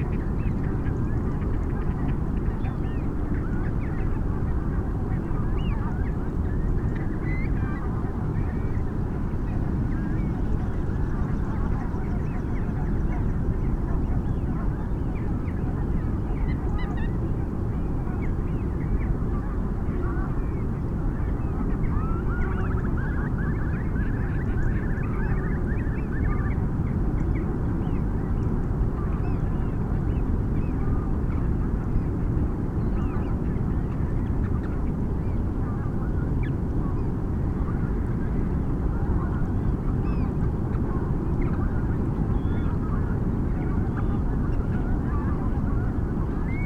pink-footed geese soundscape ... leaving roost ... SASS on tripod ... bird calls from ... whooper swan ... curlew ... dunnock ... mallard ... wren ... rook ... crow ... robin ... blackbird ... wigeon ... reed bunting ... pheasant ... bar-tailed godwit ... oystercatcher ... greylag geese ... turnstone ... rock pipit ... black-headed gull ... ringed plover ... first group leave at 5:10 ish ... background noise ... a particularly raging sea ... the sound of the birds described by some one as a 'wild exhilarating clangour' ...